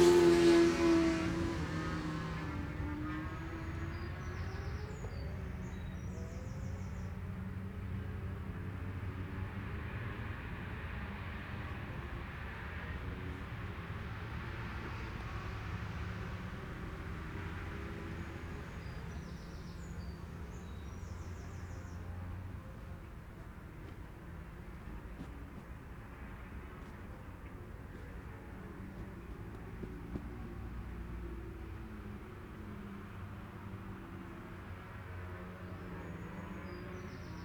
Scarborough UK - Scarborough Road Races 2017 ...
Cock o' the North Road Races ... Oliver's Mount ... ultra lightweight / lightweight motorbike qualifying ...